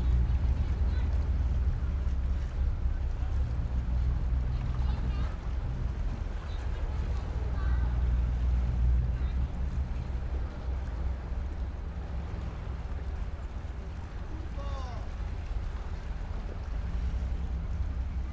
Mogán, Gran Canaria, on a pier